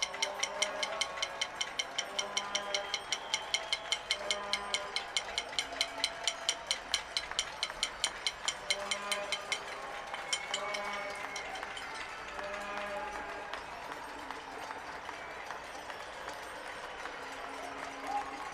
Neighbours from the West End showing gratitude at 7 p.m. from their balconies. Day by day the crowd seems to grow bigger.
British Columbia, Canada, March 2020